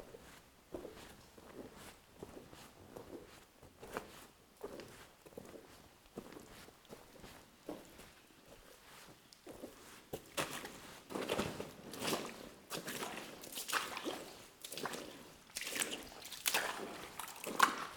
Differdange, Luxembourg - Muddy mine
Walking in a very muddy underground mine. There's a pellicle of calcite, my feetsteps breaks it.